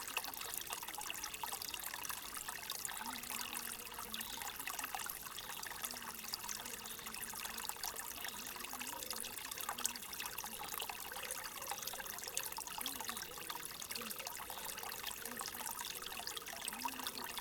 Нагірна вул., Вінниця, Вінницька область, Україна - Alley12,7sound14stream
Ukraine / Vinnytsia / project Alley 12,7 / sound #14 / stream
27 June 2020, 13:40